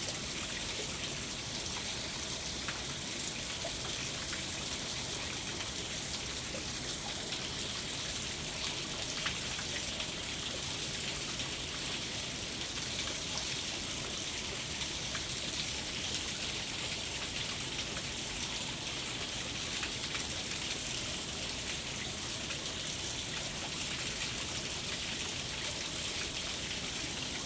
Swindale, UK - waterfall (cave)
recorded inside a small "cave" next to waterfalls on Swindale Beck. Zoom H2n.
North West England, England, United Kingdom, 5 May, 16:44